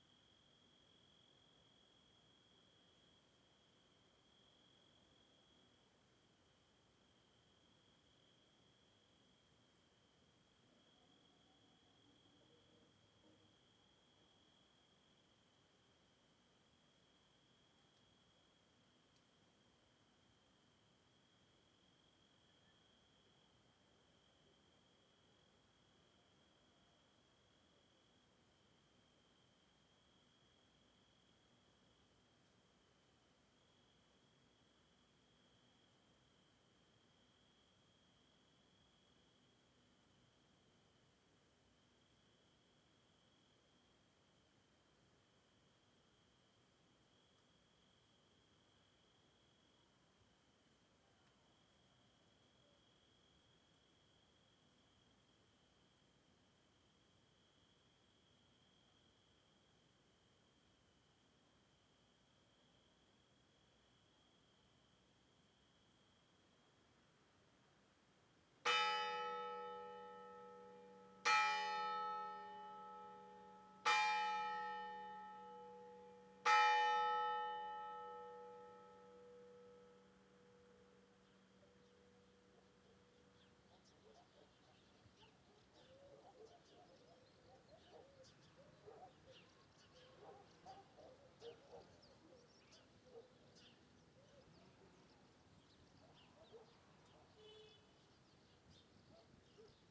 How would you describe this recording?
Bolulla - Province d'Alicante - Espagne, Ambiance de nuit + cloche 4h + Ambiance du matin + cloche 7h, Écoute au casque préconisée, ZOOM F3 + AKG C451B